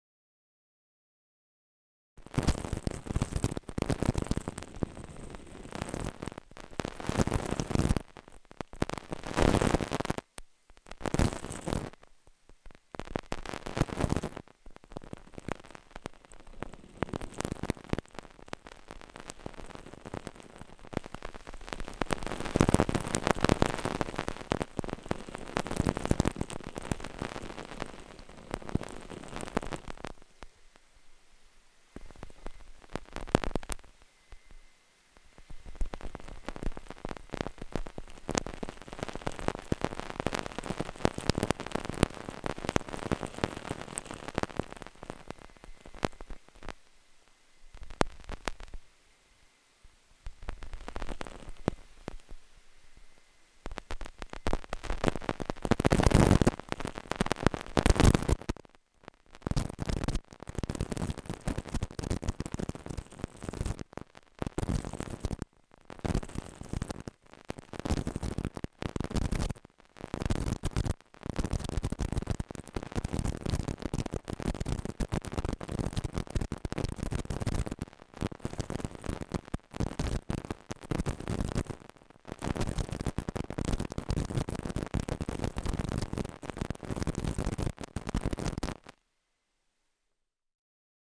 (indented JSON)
{
  "title": "Walking Holme Crackle",
  "date": "2011-04-19 02:31:00",
  "description": "Hanging a hydrophone in the jet of water.",
  "latitude": "53.56",
  "longitude": "-1.83",
  "altitude": "221",
  "timezone": "Europe/London"
}